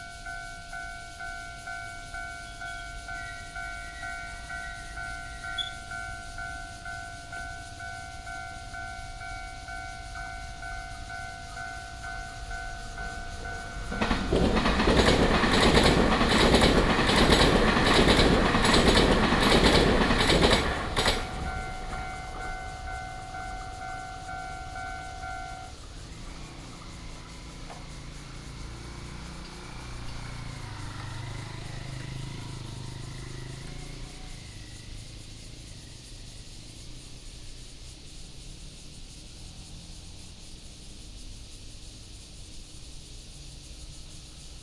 忠義里, Zhongli Dist., Taoyuan City - in the Railroad Crossing
Factory sound, Cicada cry, Traffic sound, The train runs through, Railroad Crossing